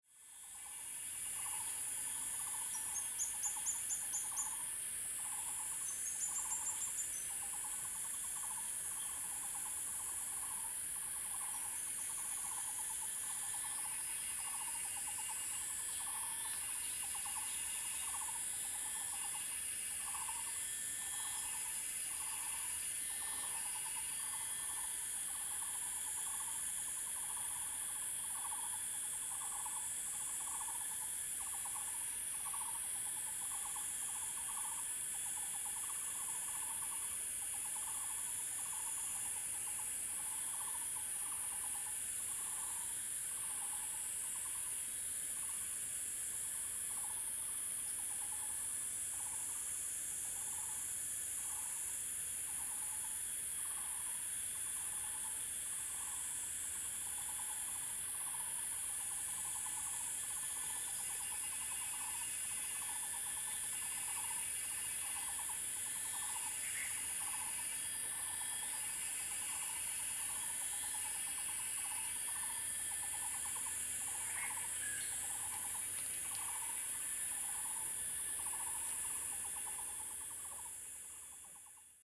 華龍巷, 魚池鄉五城村 - Cicada and birds sounds
Cicada and birds sounds
Zoom H2n MS+XY
Puli Township, 華龍巷164號, 19 May